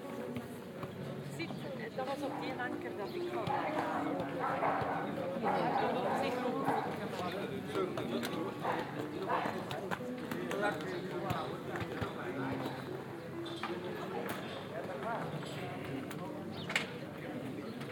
Square at Altea, Hiszpania - (31) BIGuitarist and bells
Binaural recording of walk around a Altea square with a musician, bells and dog at the end.
ZoomH2n, Soundman OKM